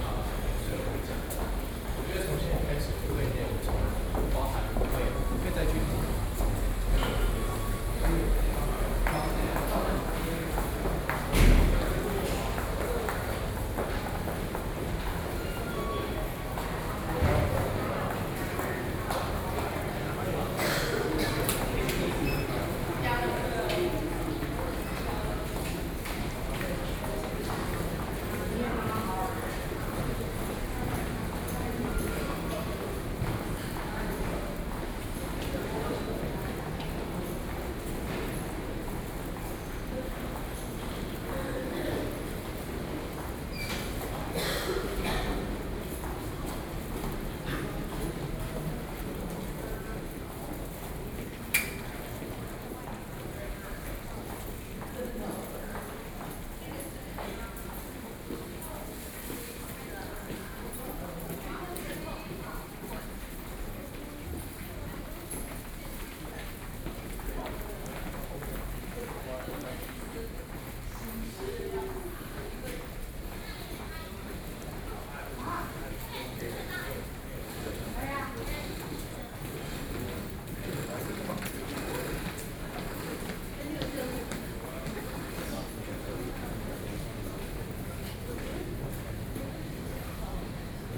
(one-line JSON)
{"title": "台灣台北市台大醫院西址大樓 - in the hospital", "date": "2012-11-29 12:46:00", "description": "Walking across the hospital, (Sound and Taiwan -Taiwan SoundMap project/SoundMap20121129-4), Binaural recordings, Sony PCM D50 + Soundman OKM II", "latitude": "25.04", "longitude": "121.52", "altitude": "13", "timezone": "Asia/Taipei"}